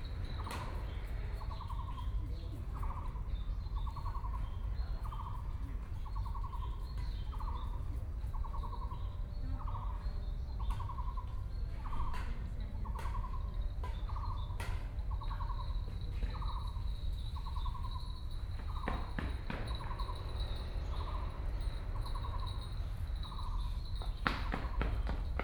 Sitting in the park, Construction noise, Birdsong, Insects sound, Aircraft flying through
Binaural recordings
Taipei City, Taiwan, 15 March 2014